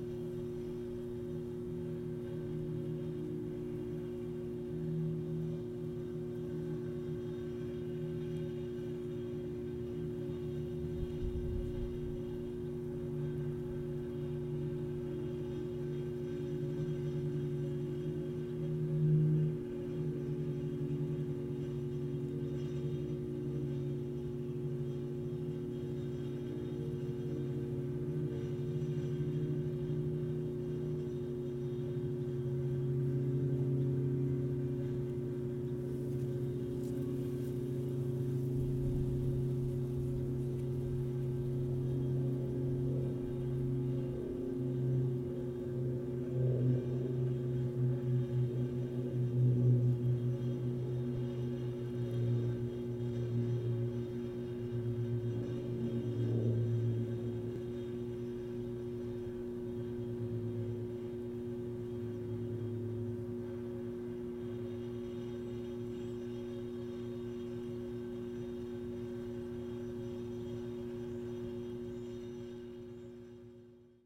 Greentree Substation, St. Louis, Missouri, USA - Greentree Substation
Recording of electric substation at end of Greentree Park. Also hum of overhead power lines and passing planes.